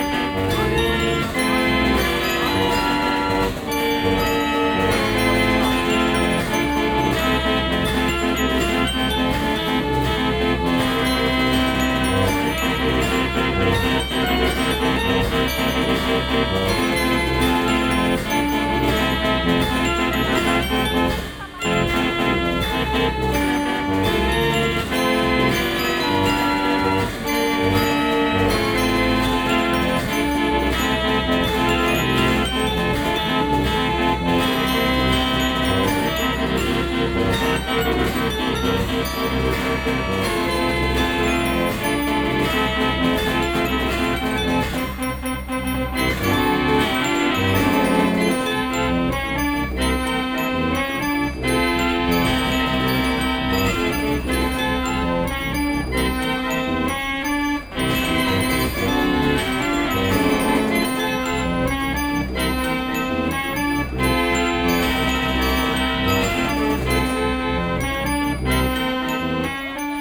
Dircksenstr., Weihnachtsmarkt - Musikmaschine 1904
07.12.2008 18:00: Weihnachtsmarkt, Rummelplatz, restaurierte mechanische Musikmaschine aus dem Jahr 1904 / christmas market, mechanical music machine from 1904
Berlin, 7 December 2008, 18:00